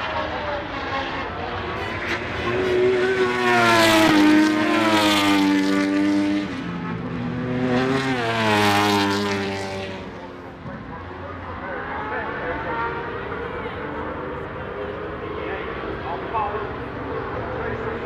Castle Donington, UK - British Motorcycle Grand Prix 2003 ... moto grand prix ...
Warm up ... mixture of 990cc four strokes and 500cc two strokes ... Starkeys ... Donington Park ... warm up and associated noise ... Sony ECM 959 one point stereo mic ... to Sony Minidisk ...